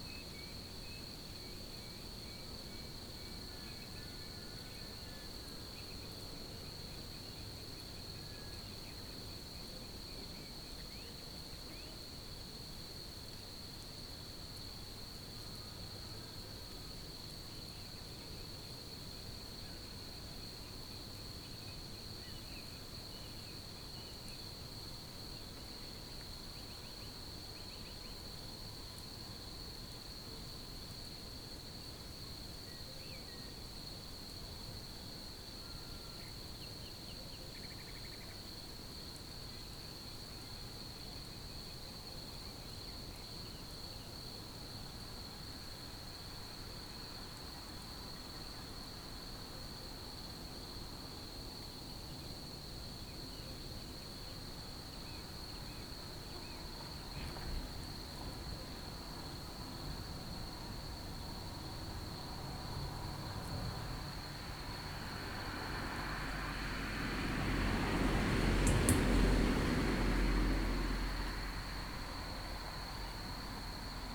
Békés, Hungary - Hometown night ambient
Hometown night ambient
DR-44WL, integrated microphones.
Early night soundscape, birds (phesant and others I don't know), Melolontha melolontha, small frogs(?) lurking around.
Dog barking and passing cars.
The location is approximate due privacy concerns.
Alföld és Észak, Magyarország